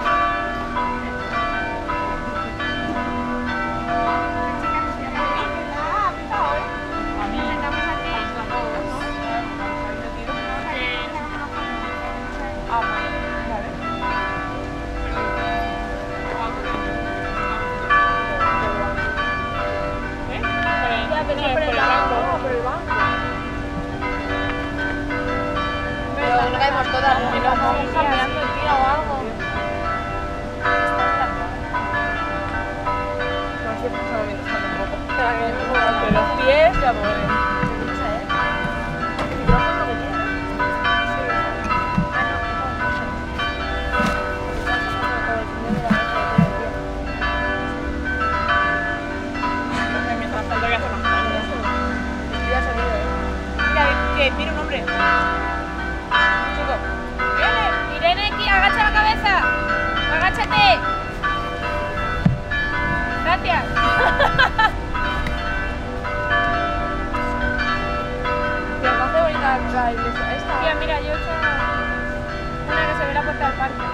Brussels, Place du Petit Sablon.
The bells, Spanish tourists.